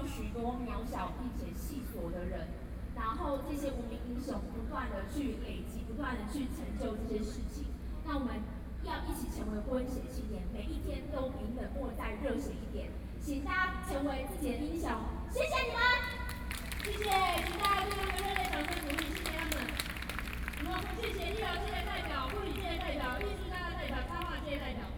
Taipei, Taiwan - Protest
Protest against nuclear power, Zoom H4n+ Soundman OKM II, Best with Headphone( SoundMap20130526- 8)
中正區 (Zhongzheng), 台北市 (Taipei City), 中華民國, May 2013